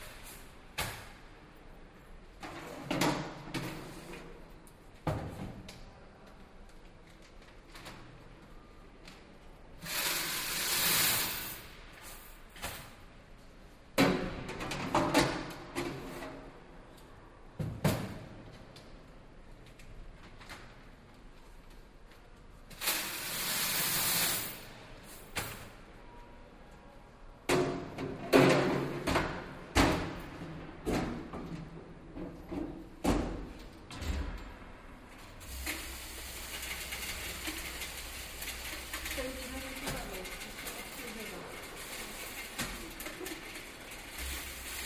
Main Post Office, Jindřišská
Sound of the automatic counting machine for coins being emptied by a clerc. Recorded at the Main Post Office in Jindřišská. Different coins, each with specific sound. The coins of 50 hellers dissapear next year
8 August